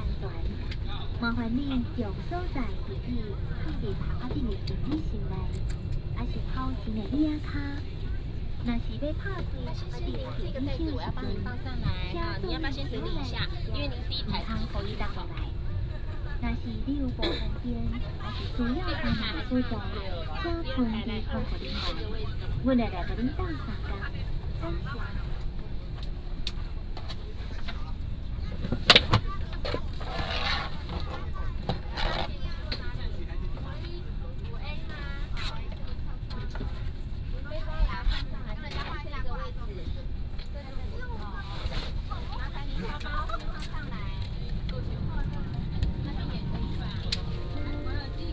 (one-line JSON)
{"title": "Kaohsiung International Airport, Taiwan - In the cabin", "date": "2014-11-02 13:35:00", "description": "In the cabin", "latitude": "22.57", "longitude": "120.34", "altitude": "12", "timezone": "Asia/Taipei"}